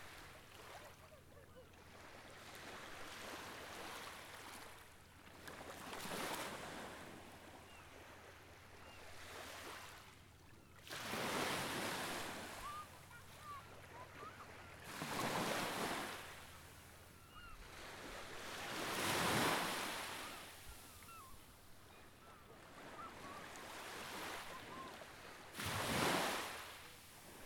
Trégastel, Bretagne, France. - quiet waves [Grève blanche]
Tregastel, Grève blanche.Ambiance très calme sur la plage, quelques voix et mouettes.Petites vagues.
Tregastel, Grève blanche.Very quiet ambiance on the beach.Soft waves.Somes voices and seagulls
5 August, 11:50